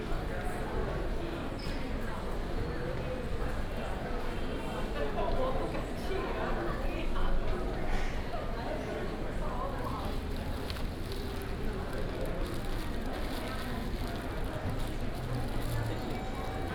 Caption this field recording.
In the hospital lobby, The counter of the medicine, Binaural recordings, Sony PCM D100+ Soundman OKM II